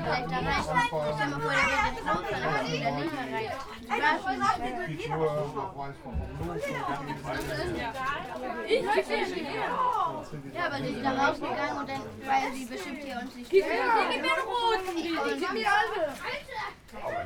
neoscenes: kids on train to Kiel